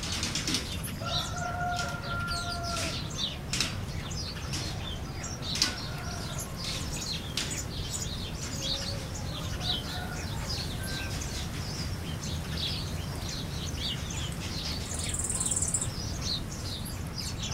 piton st leu, ile de la reunion
14 August 2010, 19:51